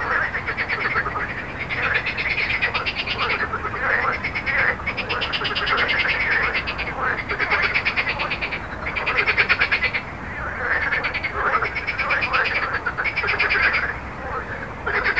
Jardins de la Ligne, Rue Jacqueline Auriol, Toulouse, France - Frog Montaudran
Frog and road
captation zoom h4n